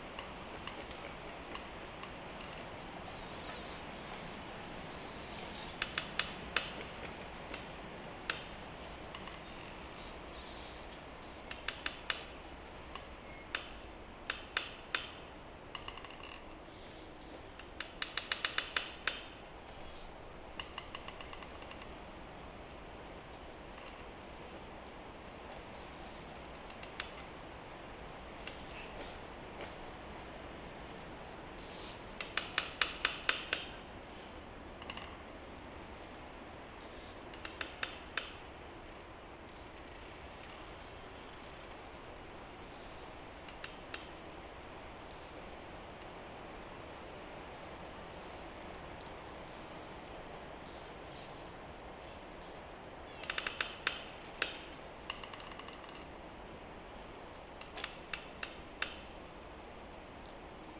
Vyžuonos, Lithuania, wind and trees
Wind rises, rain approaches. Half fallen trees cracking.
Utenos apskritis, Lietuva